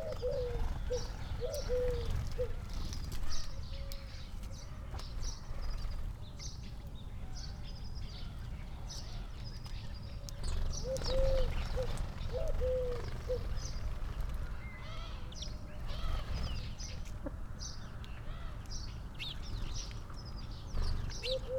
Luttons, UK - bird feeder soundscape ...
bird feeder soundscape ... SASS ... bird calls from ... robin ... house sparrow ... starling ... collared dove ... crow ... great tit ... blue tit ...wood pigeon ... dunnock ... rook ... background noise ...